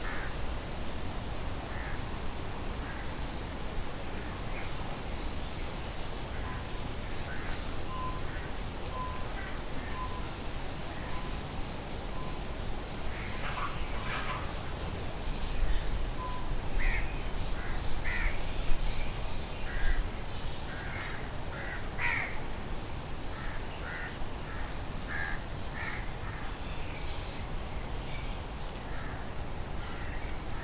Seahorse Road, Kenia - Last day ambient
Early morning ambience at Sunset Villa porch in Seahorse Village, Kilifi, Kenya. Recorded with Zoom H5.
Coastal Kenya, Kenya, 4 April